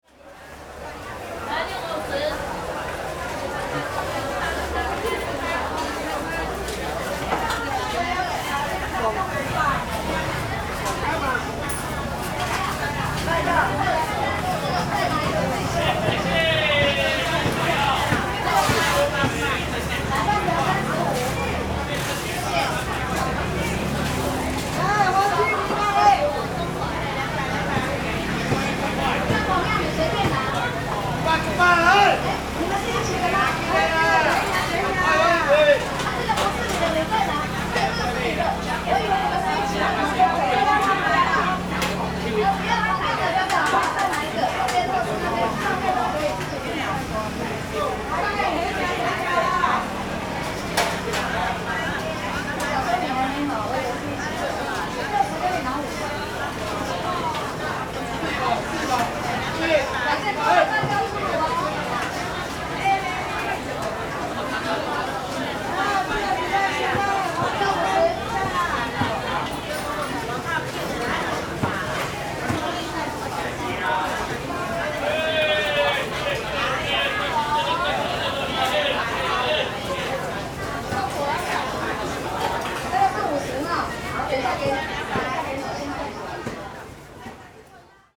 Minxiang St., Zhonghe Dist., New Taipei City - Traditional Market
In the Market, Traffic Sound, Zoom H4n